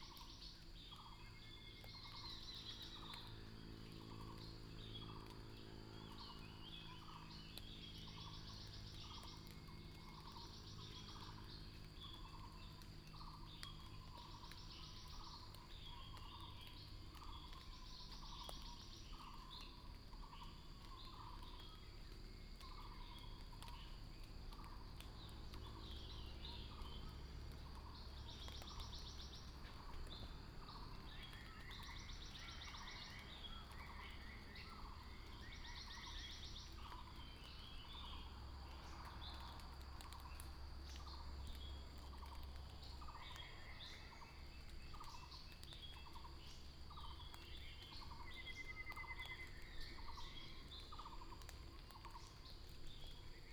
桃米里, Puli Township, Nantou County - the morning
Traffic Sound, Chicken sounds, Bird sounds, Water droplets fall foliage
Puli Township, 水上巷, 21 April 2016, 05:27